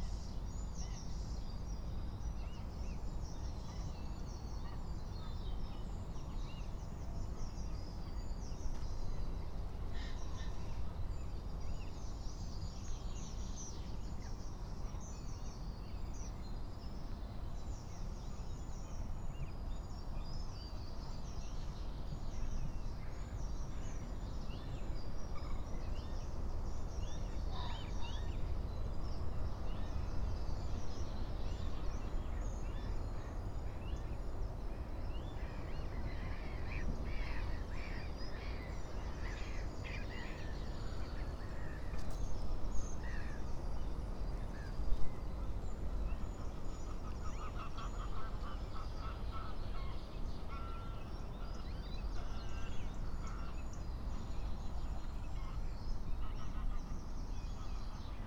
06:00 Berlin Buch, Lietzengraben - wetland ambience